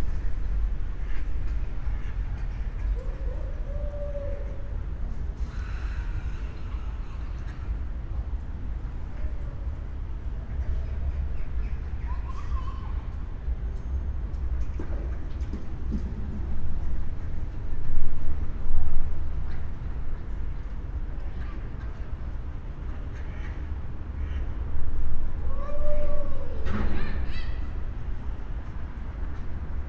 Panevėžys, Lithuania, under the bridge
Standing under the bridge
Panevėžio apskritis, Lietuva